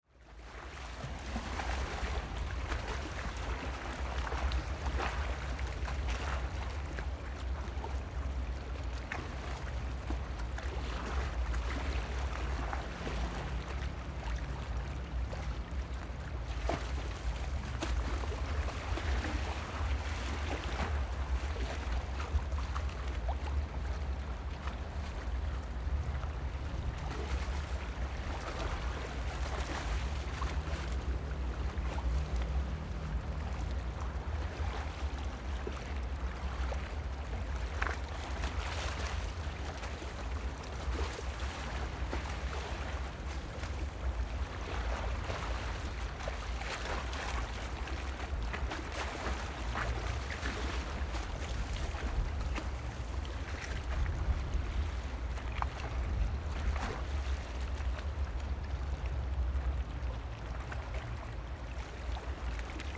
觀音亭海濱公園, Magong City - Waves and tides
Waves and tides, Waterfront Park
Zoom H6 + Rode NT4